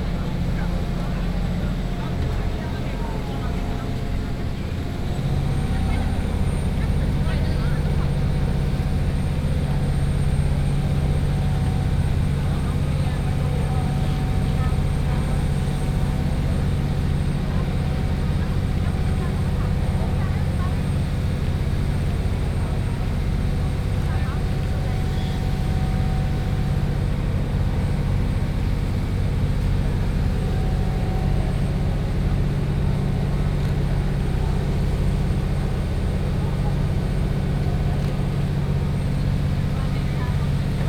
ถนน สี่พระยา Khwaeng Bang Rak, Khet Bang Rak, Krung Thep Maha Nakhon, Thailand - Flussbus Bangkok
A boat bus running along the river in Bangkok. Theres people, the motor and an occasional whistling which was produced by the boats co-sailor, signaling the captain how close he is to the next landing stage, whether the rope has been fixed or losened, and whether the boat is ready to take off again -- a very elaborate whistling technique.
29 August 2017, 12:40